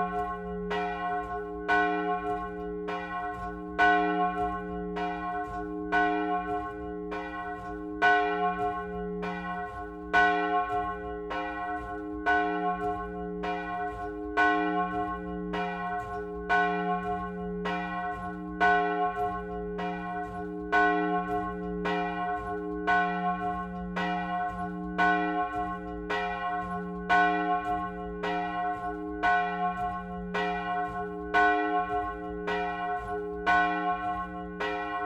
Rte de Roubaix, Lecelles, France - Lecelles - église
Lecelles (Nord)
église - Volée automatisée - Cloche aigüe